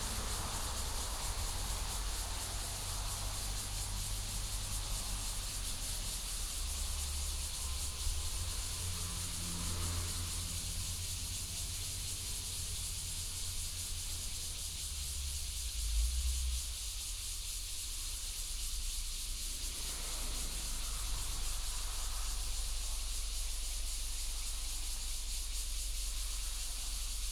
永安村, Luye Township - Cicadas sound
Cicadas sound, Birdsong, Traffic Sound
7 September 2014, 09:27